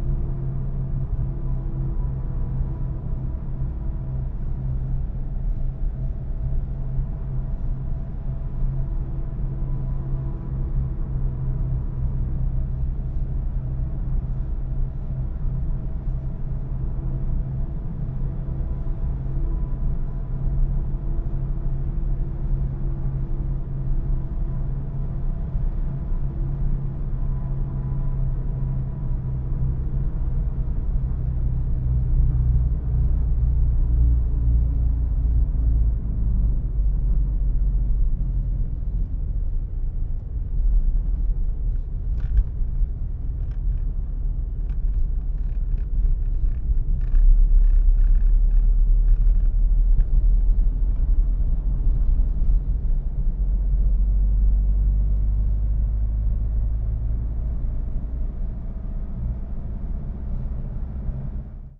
from/behind window, Mladinska, Maribor, Slovenia - streets cleaner
streets cleaner with strong collateral resonance effect
7 November 2012